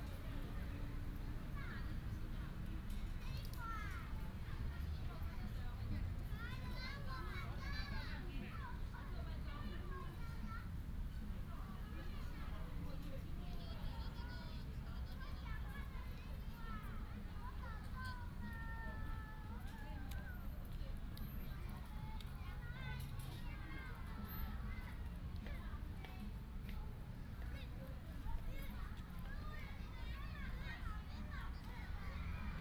新勢公園, Pingzhen Dist., Taoyuan City - Children's play area
in the park, Child